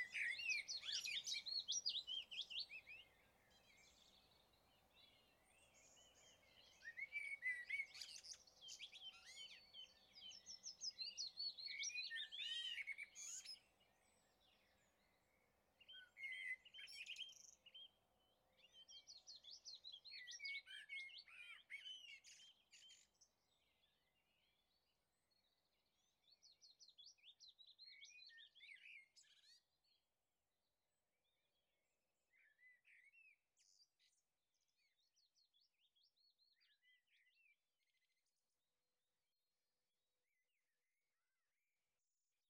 I spent the night in my van in this lay-by whilst out for a 3 day recording trip. I was woken by the birds around 4am so put my mic outside on a stand and lay back whilst the birds sang. Pearl MS-8 on a stand. Sound Devices MixPre 10T